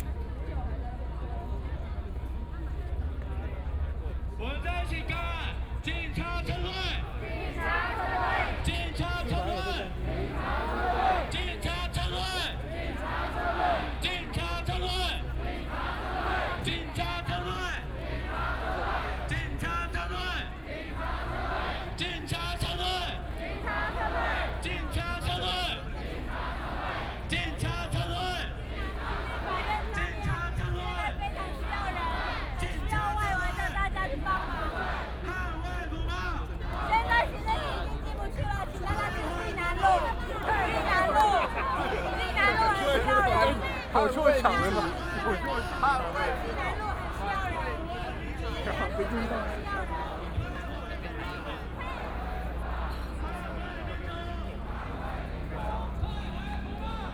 中正區梅花里, Taipei City - occupied
Student activism, Walking through the site in protest, People and students occupied the Executive Yuan
Taipei City, Taiwan, 23 March 2014, 10:01